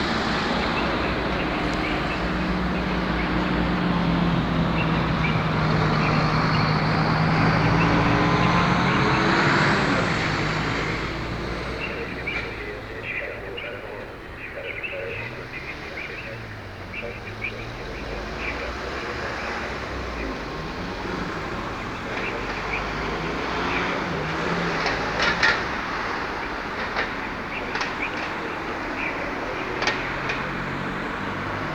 Witkiewicza, Szczecin, Poland
At the pedestrian crossing.
21 November 2010